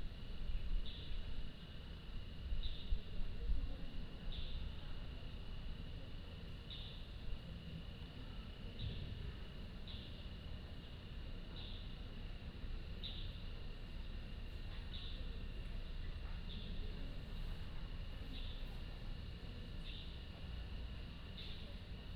National Chi Nan University, Puli Township - In the Plaza
In the school's Square, Birdsong
Nantou County, Taiwan, 30 April